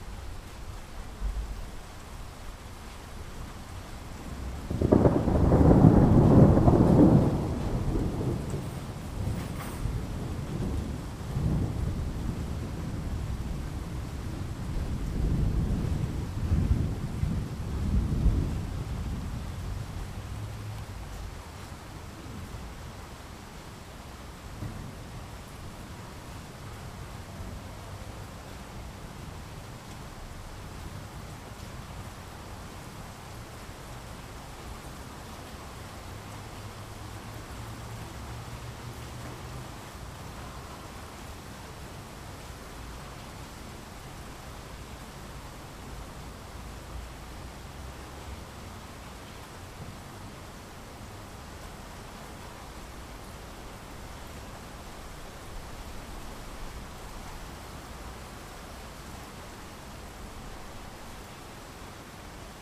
Cologne - starting rain and thunder
After several days of sunny weather, today's sky gives a preliminary taste of autumn: it is dark, grey and rainy. The recording captures the moment, when a heavy rain starts and thunder rolls.
25 August 2009, 09:20